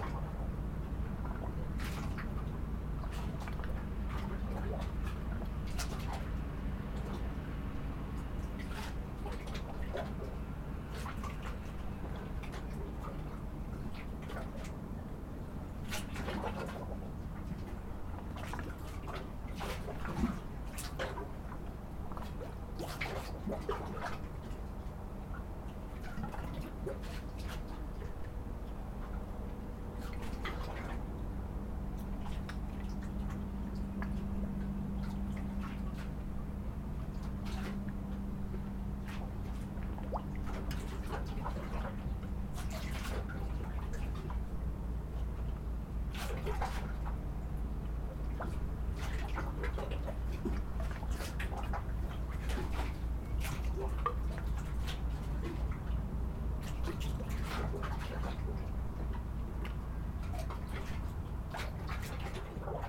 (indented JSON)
{
  "title": "Sandviken, Bergen, Norway - waterfront",
  "date": "2012-08-28 19:36:00",
  "description": "Water bubbling against the wooden pier, distance fog horn and jet plane, port sounds",
  "latitude": "60.41",
  "longitude": "5.32",
  "timezone": "Europe/Oslo"
}